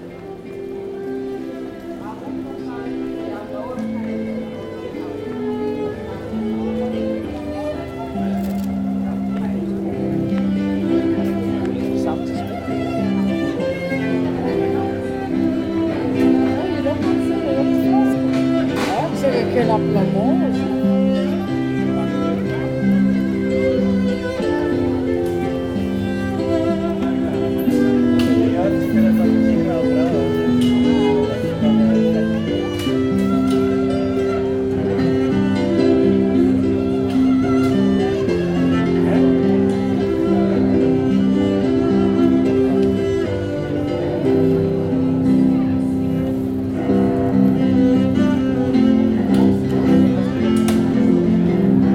Antwerpen, Belgique - Street music players
Two music players in the street, one with a keyboard, a second one with a cello. It's summer time in Antwerp.
Antwerpen, Belgium